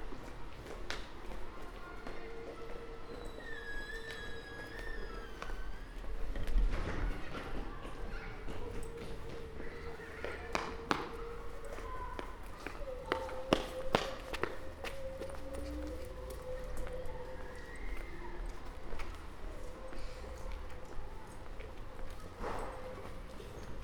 Schiltigheim, France - Vers le bâtiment B
Recorded by the children of Mermoz School in Strasbourg, using a parabolic reflector and Zoom H1 recorder, after the little tour of the schoolyard activities, they went back to building B, using doors and stairs.